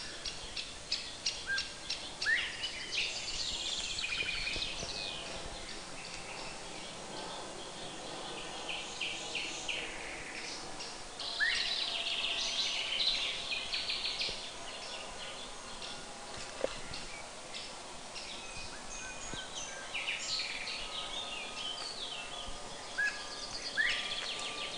{
  "title": "boschi della brughierezza, Parabiago, Picchio e colino ai boschi della brughierezza",
  "date": "2003-06-15 16:32:00",
  "description": "picchio e colino della virginia ai boschi della brughierezza\n(giugno 2003)",
  "latitude": "45.54",
  "longitude": "8.91",
  "altitude": "179",
  "timezone": "Europe/Rome"
}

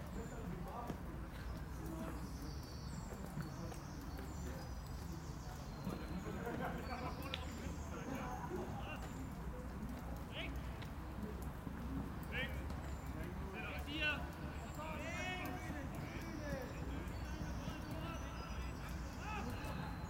{
  "title": "koeln, frisbee match",
  "description": "i did not know that there is such a thing as a frisbee match (like a soccer match).\nrecorded june 20th, 2008.\nproject: \"hasenbrot - a private sound diary\"",
  "latitude": "50.93",
  "longitude": "6.88",
  "altitude": "67",
  "timezone": "GMT+1"
}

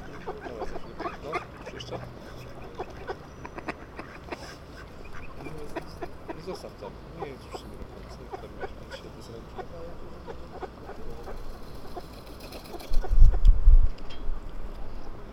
{
  "title": "Park Hellenów, Lodz",
  "date": "2011-11-18 13:10:00",
  "description": "autor: Łukasz Cieślak",
  "latitude": "51.78",
  "longitude": "19.47",
  "altitude": "212",
  "timezone": "Europe/Warsaw"
}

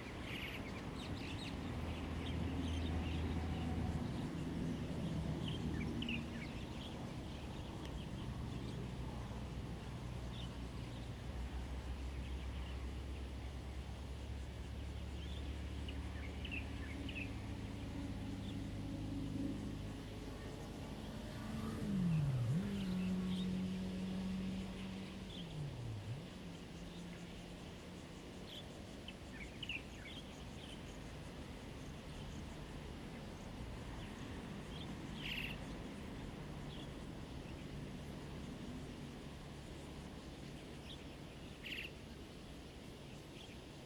{
  "title": "隆昌村, Donghe Township - Birdsong",
  "date": "2014-09-06 17:37:00",
  "description": "Birdsong, Traffic Sound, Evening farmland\nZoom H2n MS+XY",
  "latitude": "22.93",
  "longitude": "121.27",
  "altitude": "45",
  "timezone": "Asia/Taipei"
}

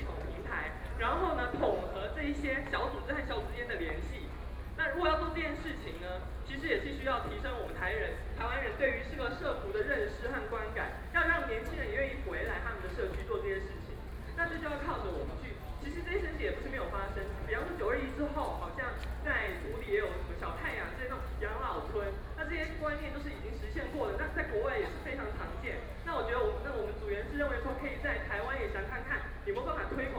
{
  "title": "Qingdao E. Rd., Taipei City - protest",
  "date": "2014-04-03 13:49:00",
  "description": "Walking through the site in protest, People and students occupied the Legislature Yuan",
  "latitude": "25.04",
  "longitude": "121.52",
  "altitude": "11",
  "timezone": "Asia/Taipei"
}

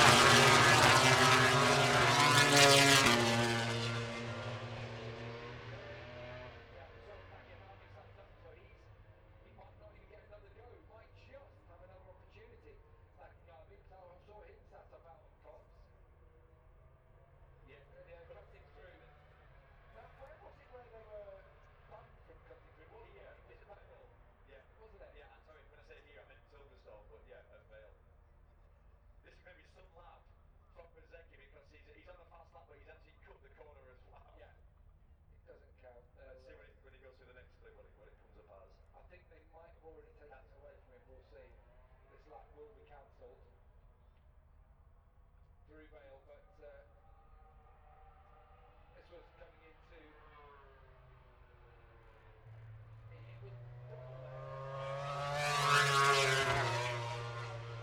{
  "title": "Silverstone Circuit, Towcester, UK - british motorcycle grand prix 2022 ... moto grand prix ...",
  "date": "2022-08-06 14:03:00",
  "description": "british motorcycle grand prix 2022 ... moto grand prix qualifying one ... dpa 4060s on t bar on tripod to zoom f6 ...",
  "latitude": "52.08",
  "longitude": "-1.01",
  "altitude": "158",
  "timezone": "Europe/London"
}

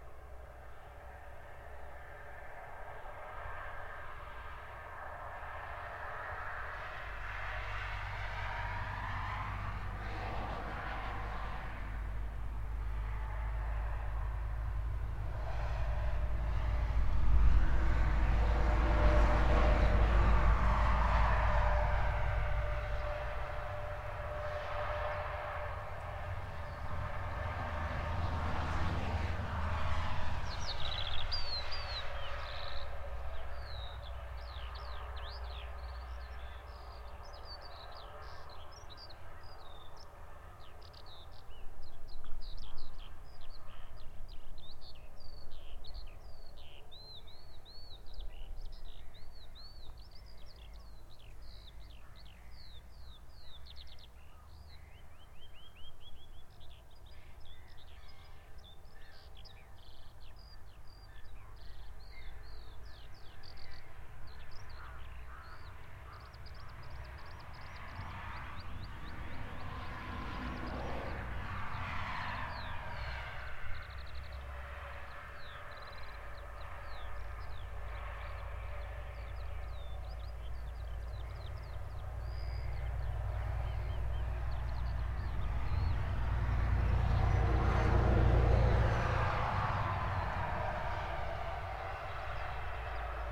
{
  "title": "Utena, Lithuania, birds and trucks",
  "date": "2021-03-28 15:40:00",
  "description": "highway listening from abandoned building",
  "latitude": "55.49",
  "longitude": "25.65",
  "altitude": "150",
  "timezone": "Europe/Vilnius"
}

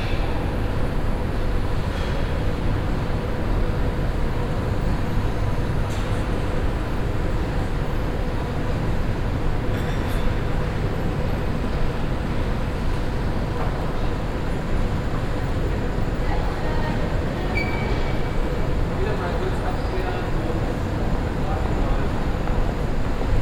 {
  "title": "cologne, mediapark, cinedom, foyer",
  "date": "2008-09-19 19:10:00",
  "description": "drehtür in foyer der kinoanlage, rolltreppenmechanik und lüftungen, stimmen in grosser halle, nachmittags\nsoundmap nrw:\nprojekt :resonanzen - social ambiences/ listen to the people - in & outdoor nearfield recordings",
  "latitude": "50.95",
  "longitude": "6.94",
  "altitude": "58",
  "timezone": "Europe/Berlin"
}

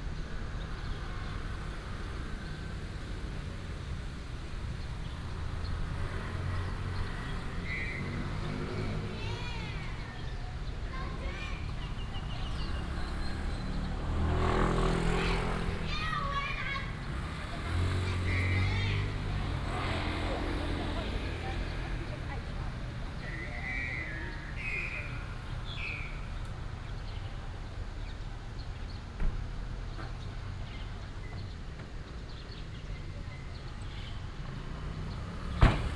{
  "title": "地政街14巷, Shulin Dist., New Taipei City - birds and traffic sound",
  "date": "2017-08-25 07:55:00",
  "description": "Birds sound, traffic sound",
  "latitude": "24.97",
  "longitude": "121.39",
  "altitude": "31",
  "timezone": "Asia/Taipei"
}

